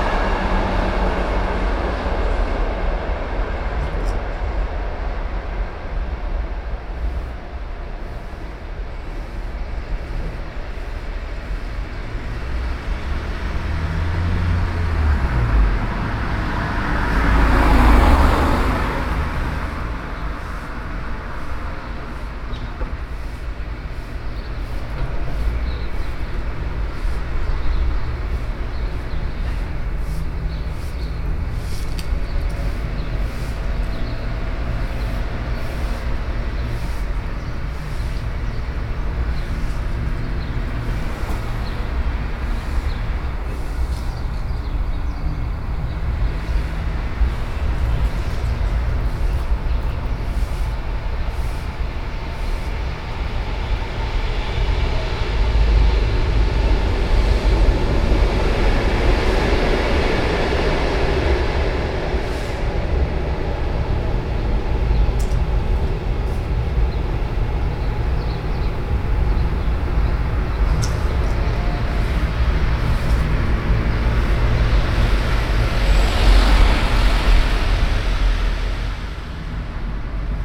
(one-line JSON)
{"date": "2011-05-10 13:44:00", "description": "Diegem, Stationsstraat - Abribus / Bus Stop.", "latitude": "50.89", "longitude": "4.44", "altitude": "32", "timezone": "Europe/Brussels"}